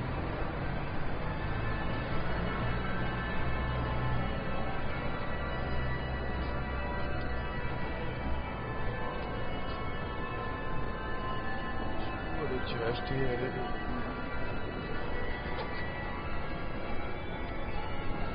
Arrondissement, Lyon, France - Carillon de l'hôtel de ville
Carillon 65 cloches -Place des Terreaux à Lyon - Zoom H6 micros incorporés X/Y